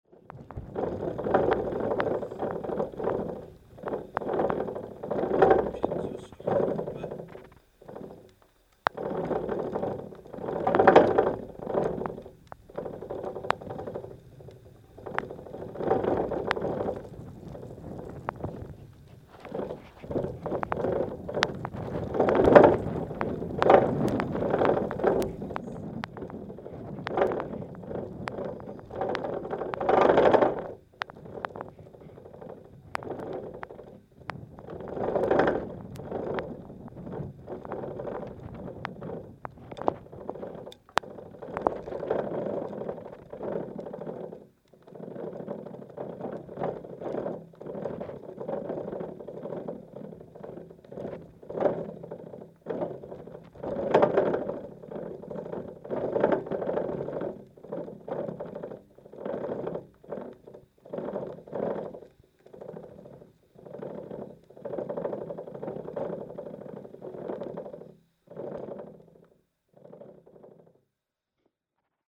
Ile percée, a piezo on a mast with wind, Zoom H6
Moëlan-sur-Mer, France